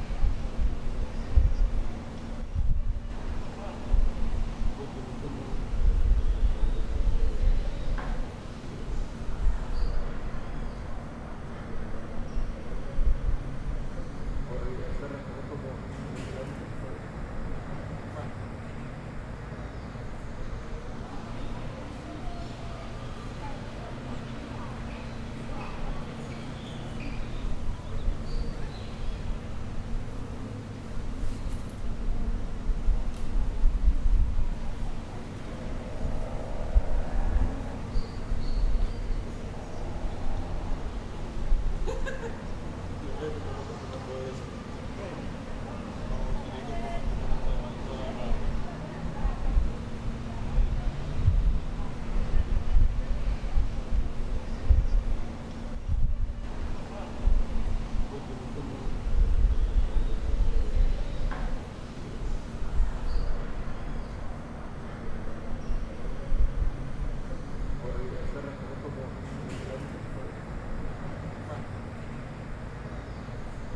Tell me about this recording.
Descripción, Sonido tónico: Balcón bloque 12, Señal sonora: Grabado por Santiago Londoño Y Felipe San Martín